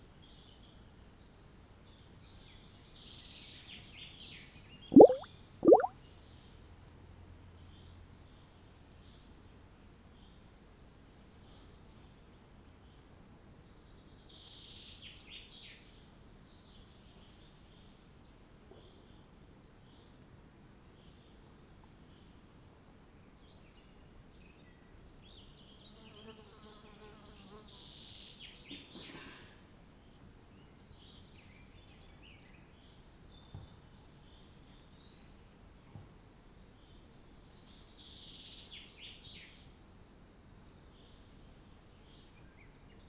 Naujasodis, Lithuania, gurgling at excrement tank
excrement tank buried in ground - passing by I heard some strange gurgling and so it is:)